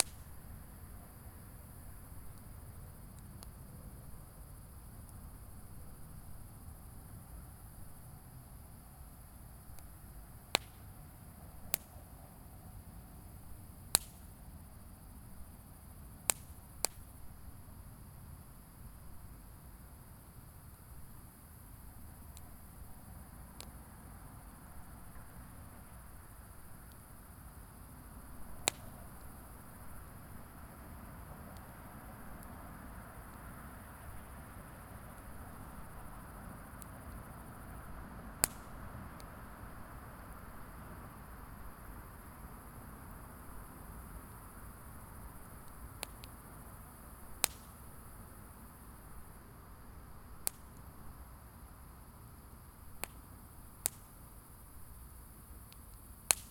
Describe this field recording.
Crackles from a very small fire that I found abandoned and revived for a little bit. Also traffic sound from a nearby bridge and a passing airliner.